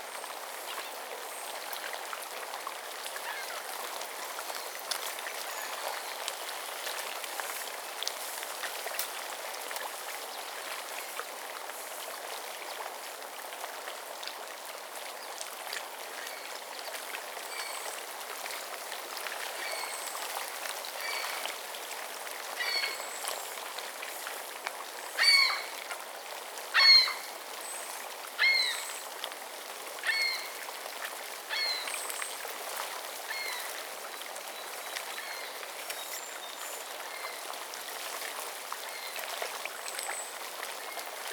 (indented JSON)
{
  "title": "Ottawa River, Gatineau, QC, Canada - Ottawa River, end of winter",
  "date": "2016-04-05 10:00:00",
  "description": "Ottawa River with many ice crystals sloshing about along the shore. Also robins and other birds singing. Zoom H2n with highpass filter post-processing.",
  "latitude": "45.41",
  "longitude": "-75.77",
  "altitude": "56",
  "timezone": "America/Toronto"
}